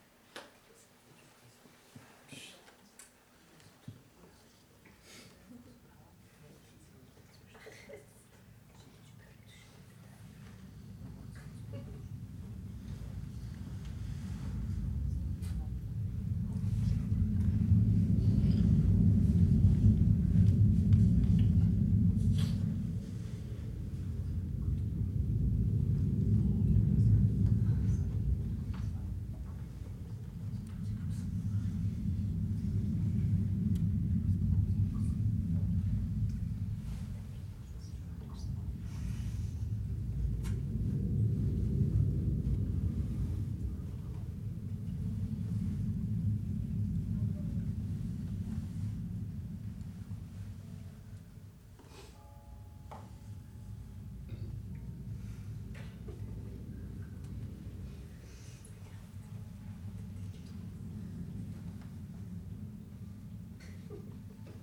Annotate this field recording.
Engineering sound memory manipulator and dear neighbour of DER KANAL, Baignoire, performs live from in to the outside making us look like dreaming sheep, so much did it astonish to travel in sonic spheres like these.